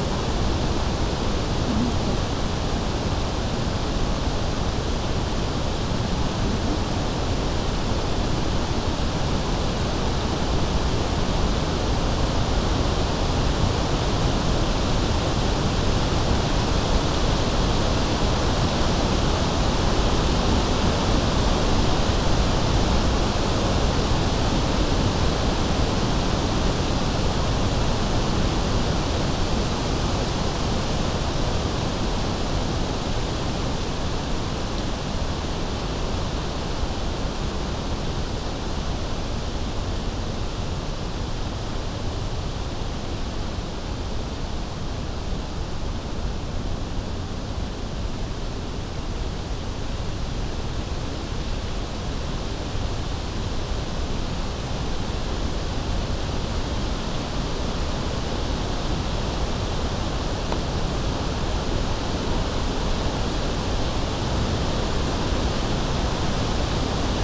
Chaumont-Gistoux, Belgique - Poplars
Wind in the poplars in the Tout-Vent street, the leaves are noisy. The name means "all the winds".
Chaumont-Gistoux, Belgium, 10 September 2016, ~12:00